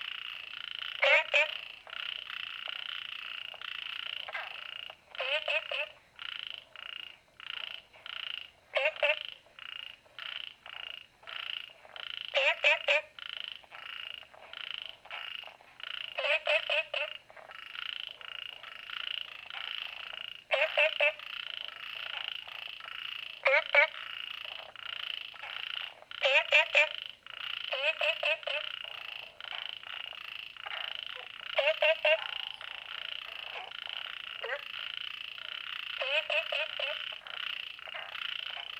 綠屋民宿, Puli Township - Different kinds of frog sounds
Frogs chirping, Small ecological pool, Different kinds of frog sounds
Zoom H2n MS+XY
Puli Township, Nantou County, Taiwan, 10 June 2015, 20:00